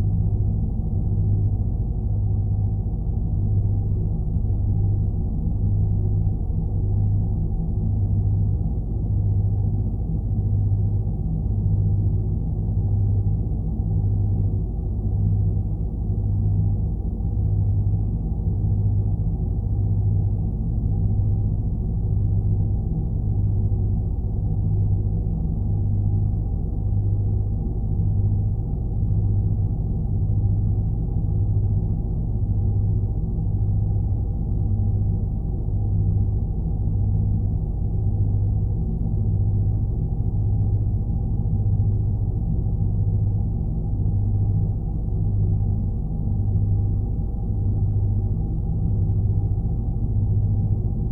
Nørgårdvej, Struer, Danmark - Drone sound
Drone sound recorded with Lome Geofone, placed outside on a large contanier (placed on the beach) with an activ pump inside. Øivind Weingaarde.